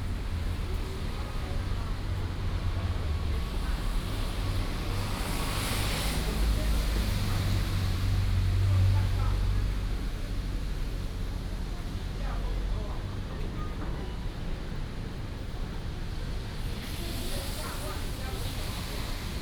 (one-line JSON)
{"title": "Xianfu Rd., Taoyuan Dist., Taoyuan City - In front of the convenience store", "date": "2016-10-12 13:02:00", "description": "In front of the convenience store, Pedestrian footsteps, Woodworking construction sound, Traffic sound", "latitude": "24.99", "longitude": "121.30", "altitude": "111", "timezone": "Asia/Taipei"}